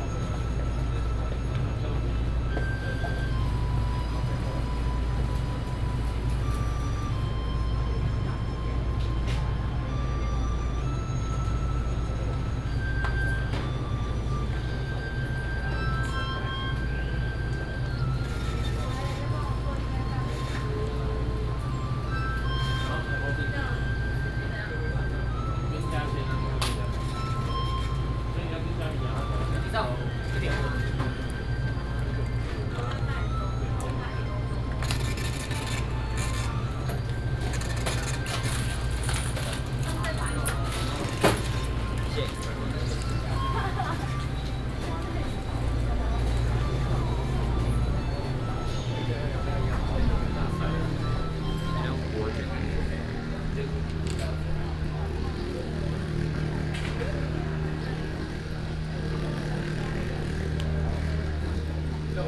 {
  "title": "鹿鳴堂, National Taiwan University - Walking into the convenience store",
  "date": "2016-03-03 16:05:00",
  "description": "Walking into the convenience store, At the university",
  "latitude": "25.02",
  "longitude": "121.54",
  "altitude": "18",
  "timezone": "Asia/Taipei"
}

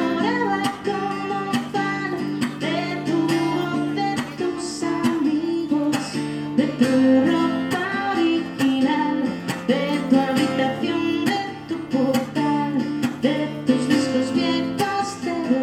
{
  "title": "Diagonal, Barcelona, Espagne - chanteuse de rue",
  "date": "2019-03-14 18:58:00",
  "description": "une chanteuse de rue à la station métro Diagonale\na street singer at Diagonale metro station",
  "latitude": "41.40",
  "longitude": "2.16",
  "altitude": "41",
  "timezone": "Europe/Madrid"
}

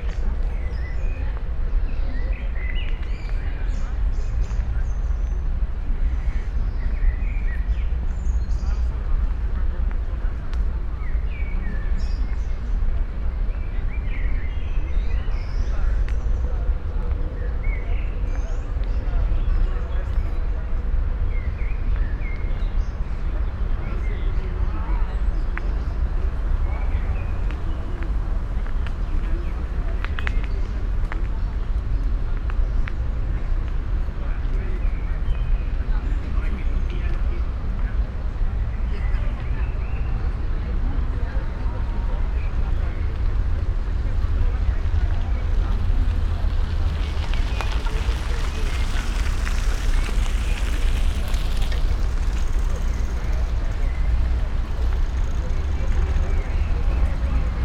{
  "title": "Kreuzberg, Berlin, Germany - und was ist das den? atombombe? ...",
  "date": "2013-05-16 20:00:00",
  "description": "... was a comment of a man siting on a bench, as a reaction on my appearance, while slow walking on sandy pathway close to the canal with recorder in my hand and microphones on my head",
  "latitude": "52.50",
  "longitude": "13.40",
  "altitude": "36",
  "timezone": "Europe/Berlin"
}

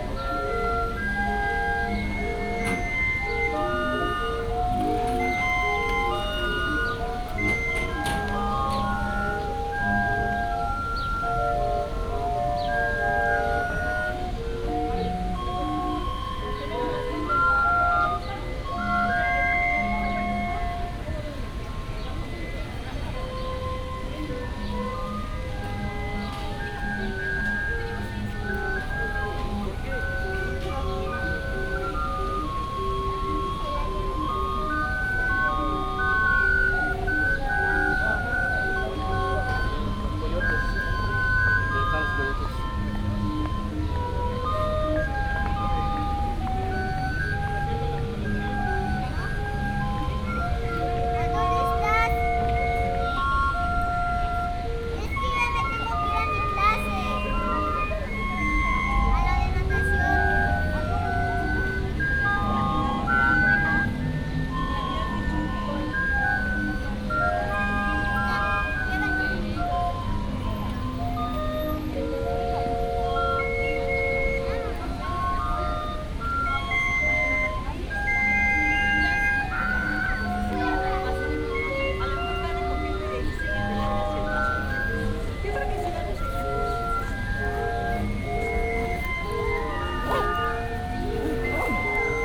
{
  "title": "Edificio Delegacional, Coyoacán, Ciudad de México, CDMX, Mexiko - Jardin Plaza Hidalgo",
  "date": "2016-05-04 16:10:00",
  "description": "What you hear are some old hand organs build in Germany sixty or seventy years ago playing their old tunes out of tune.",
  "latitude": "19.35",
  "longitude": "-99.16",
  "altitude": "2253",
  "timezone": "America/Mexico_City"
}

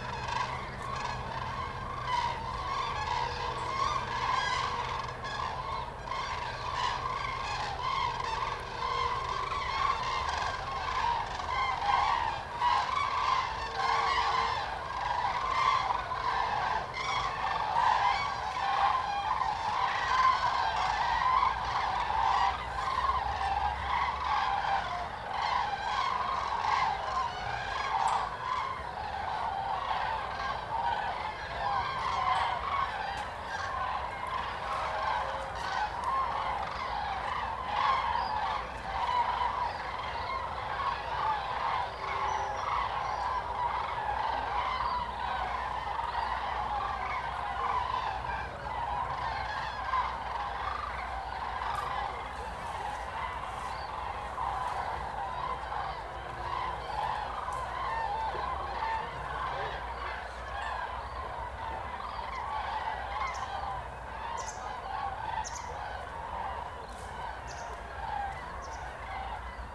{
  "title": "Linum, Fehrbellin, Germany - cranes crossing",
  "date": "2018-10-09 17:30:00",
  "description": "cranes (german: Kraniche) on their way to the rest places near the Linum ponds. In the beginning of the recording, a flock of geese is leaving the place first.\n(Sony PCM D50, DPA4060)",
  "latitude": "52.76",
  "longitude": "12.89",
  "altitude": "33",
  "timezone": "GMT+1"
}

